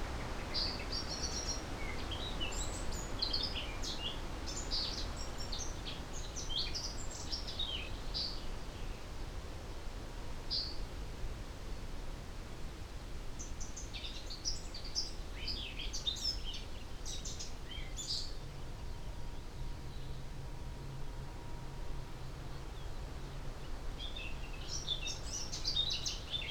{"title": "Green Ln, Malton, UK - blackcap song soundscape ...", "date": "2019-06-30 04:30:00", "description": "blackcap song soundscape ... blackcap moving from song post down a hedgerow and back ... SASS ... bird song ... call ... from chaffinch ... yellowhammer ... whitethroat ... corn bunting ... pheasant ... goldfinch ... voice at one point on the phone ...", "latitude": "54.12", "longitude": "-0.55", "altitude": "85", "timezone": "Europe/London"}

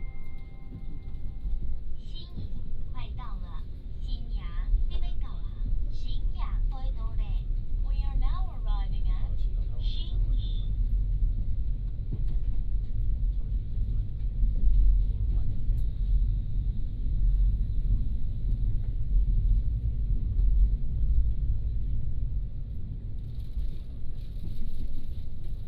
{"title": "Liujia Dist., Tainan City - In the train compartment", "date": "2017-02-18 18:27:00", "description": "In the train compartment", "latitude": "23.25", "longitude": "120.32", "altitude": "12", "timezone": "Asia/Taipei"}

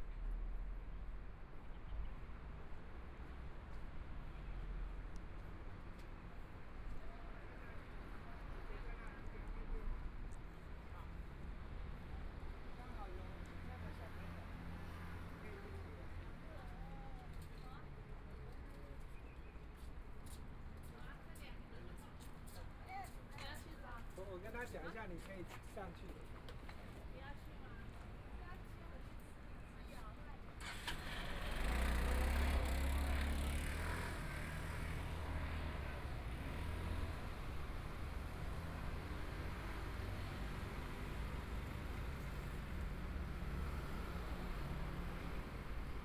{"title": "中山區永安里, Taipei city - walking in the Street", "date": "2014-02-16 17:44:00", "description": "walking in the Street, Traffic Sound, Sunny mild weather\nPlease turn up the volume\nBinaural recordings, Zoom H4n+ Soundman OKM II", "latitude": "25.08", "longitude": "121.55", "timezone": "Asia/Taipei"}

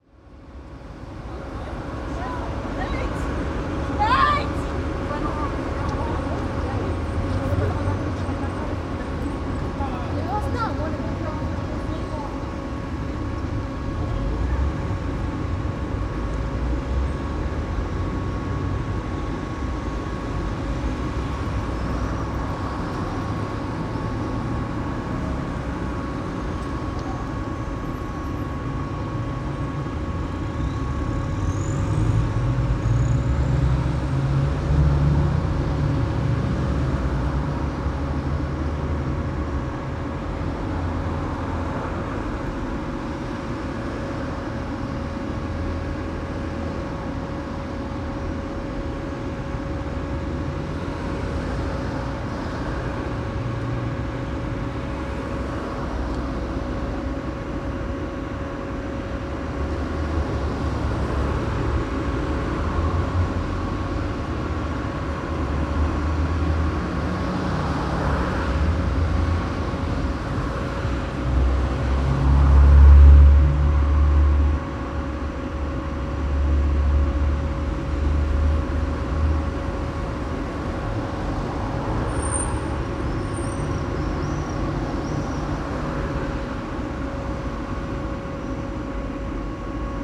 {"title": "Glavni trg, Maribor, Slovenia - Maribor2012 landmark: cona d", "date": "2012-06-14 20:32:00", "description": "another maribor 2012 inflated globe, this one on the main market square by the town hall.", "latitude": "46.56", "longitude": "15.64", "altitude": "270", "timezone": "Europe/Ljubljana"}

